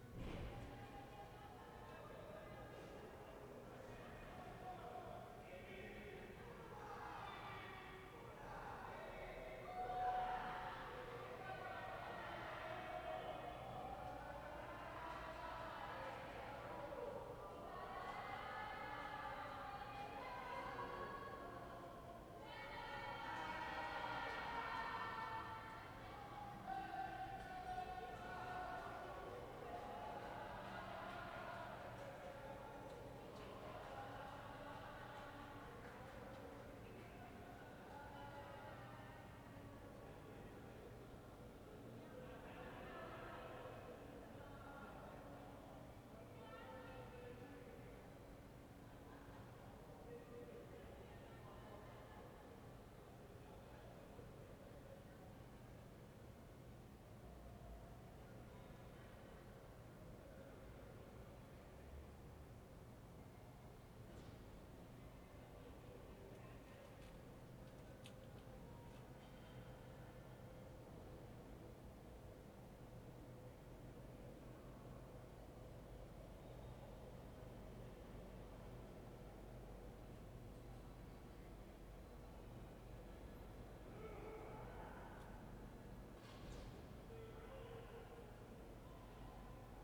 "Evening with voices and radio in background in the time of COVID19" Soundscape
Chapter LXXVI of Ascolto il tuo cuore, città. I listen to your heart, city
Thursday May 14th 2020. Fixed position on an internal terrace at San Salvario district Turin, sixty five days after (but day eleven of Phase II) emergency disposition due to the epidemic of COVID19.
Start at 10:50 p.m. end at 11:40 p.m. duration of recording 50’00”